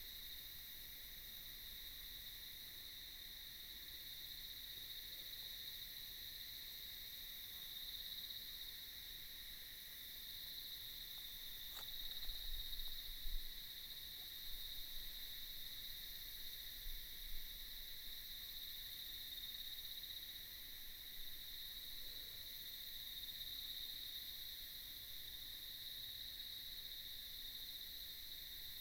牡丹鄉199縣道1.5K, Mudan Township - sound of cicadas
Beside the road, The sound of cicadas, Small highway in the mountains, Traffic sound